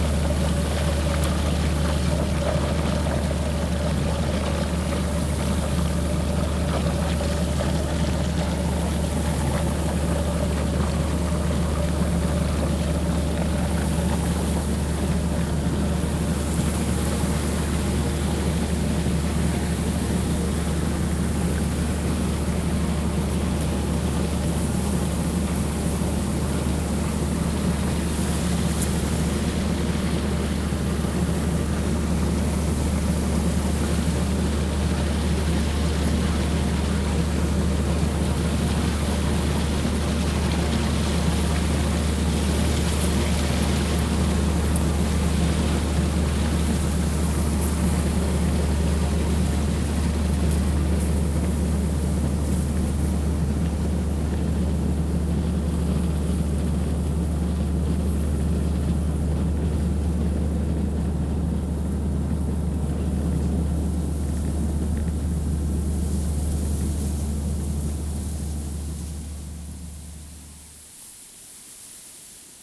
the sounds of the machinery next to the building where are cleaned the ash waters running from the chemopetrol factory Zaluží